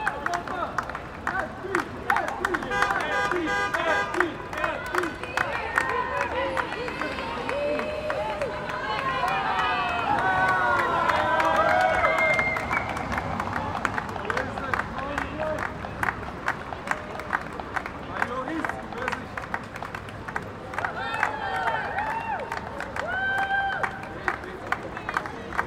Frankrijklei, Antwerpen, Belgium - Antwerp Night Marathon
This is a continuous recording of the crowds cheering on the runners of the Antwerp Night Marathon, and some of the honking of frustrated car drivers stuck in a traffic jam on the other side of the street. I used a Sony PCM-D100 for this and exported with minimal processing.